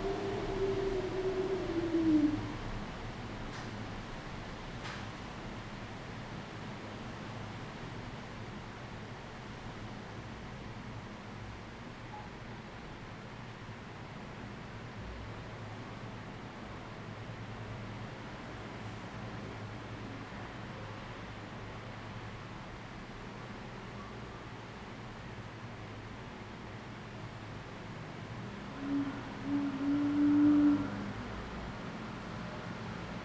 Rende 2nd Rd., Bade Dist., Taoyuan City - wind
The wind, typhoon
Zoom H2n MS+XY